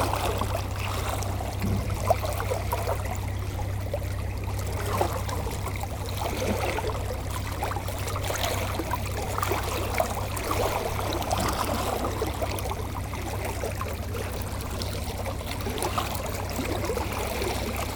Antwerpen, Belgique - Schelde river
Near the Schelde river on the 't Steen pontoon, listening to the water flowing, an helicopter passing and an empty Container ship going to the harbour.